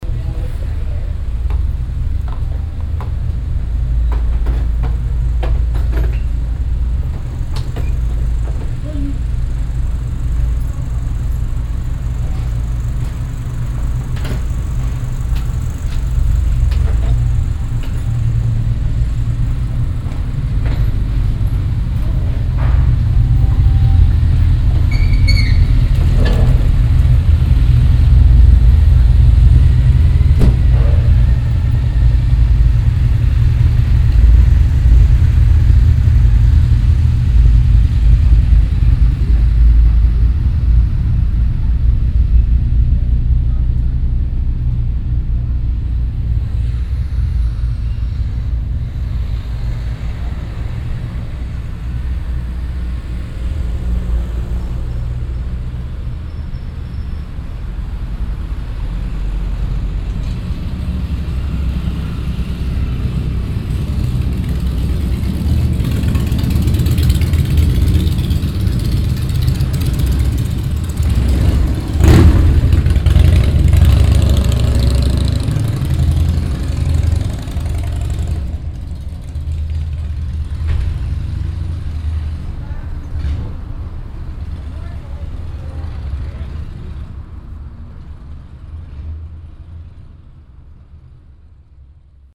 vianden, grand rue, motorbikes
A group of motorbikes driving up the small, narrow cobblestone pavement road that is crowded by tourists at this saturday afternoon. The microphones nearly clipping on the deep motor resonance.
Vianden, Hauptstraße, Motorräder
Eine Gruppe von Motorrädern fährt die schmale enge Kopfsteinpflasterstraße hinauf, die an diesem Samstag Nachmittag voller Touristen ist. Die Mikrophone übersteuern fast durch die tiefe Motorenresonanz.
Vianden, grand rue, motocyclettes
Un groupe de motards remonte la petite rue pavée et étroite remplie de touristes un samedi après-midi. Les microphones sont presque assourdis par le bruit profond des moteurs.
Project - Klangraum Our - topographic field recordings, sound objects and social ambiences
Vianden, Luxembourg